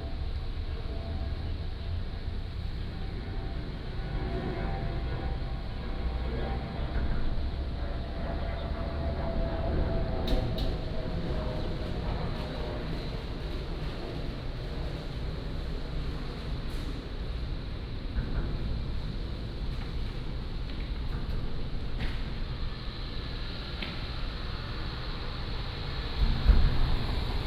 Kaohsiung International Airport, Taiwan - Outside the airport
Outside the airport, Standing beneath the Viaduct, Traffic Sound
Kaohsiung International Airport (KHH), 國內航站(往南搭車處)